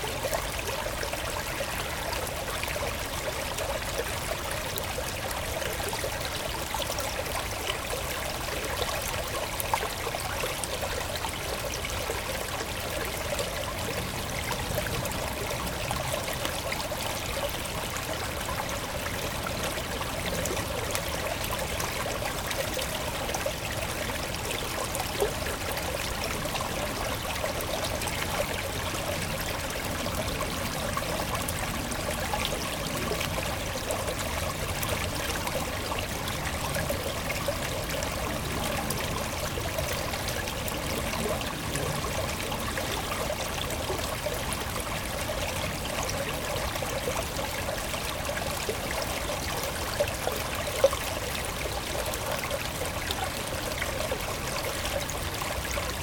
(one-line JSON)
{"title": "Court-St.-Étienne, Belgique - River Ry Sainte-Gertrude", "date": "2016-02-08 12:30:00", "description": "A small river, called \"Ry Ste-Gertrude\". This is recorded during windy times.", "latitude": "50.61", "longitude": "4.54", "altitude": "90", "timezone": "Europe/Brussels"}